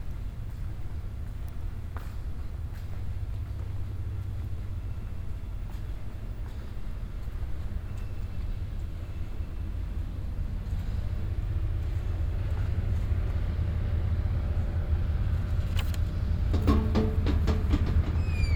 Music building at the IJ, harbour Amsterdam - World Listening Day Music building at the IJ, Amsterdam
short sound walk through the public spaces of the Music Building at the IJ, Amsterdam harbour . A tall cruiseship is waiting for departure; because its a hot day doors are opened and the sounds of the ships in the harbour is resonating in the public space of the building; on the other side of the building sounds of trains and cars are coming through.